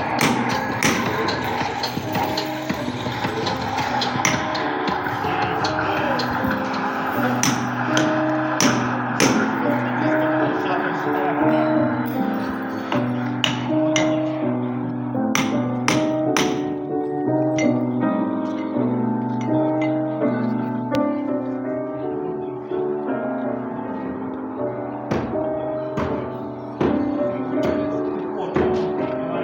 Morskoy Avenue, Lisiy Nos Village, Saint-Petersburg, Russia - Orthodox Xmas noise perfomance
Abandoned military building
Xmas party
Санкт-Петербург, Северо-Западный федеральный округ, Россия, 6 January 2021, 21:00